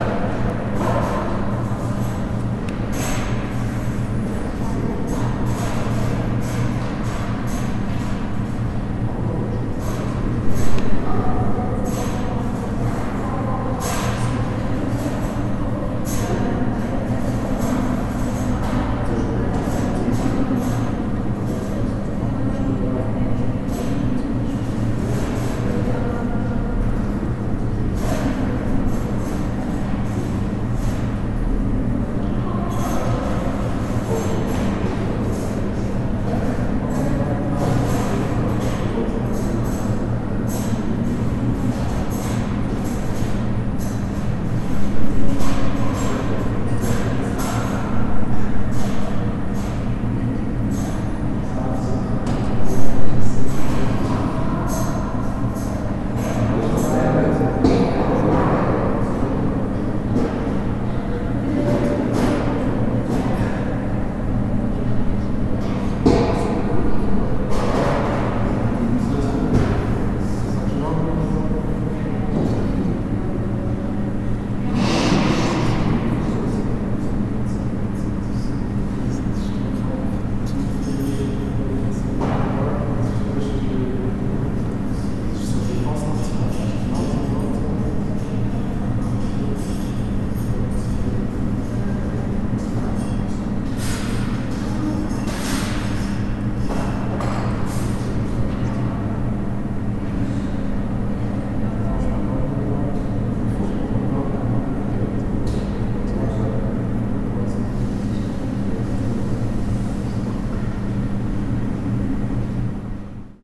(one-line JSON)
{"title": "Zürich West, Schweiz - Toni-Areal, Foyer", "date": "2014-12-31 11:00:00", "description": "Toni-Areal, Foyer, Zürich West", "latitude": "47.39", "longitude": "8.51", "altitude": "408", "timezone": "Europe/Zurich"}